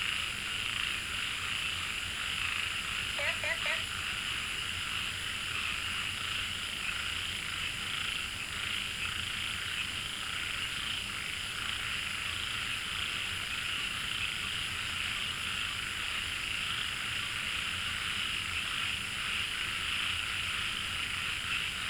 Frogs chirping, In Wetland Park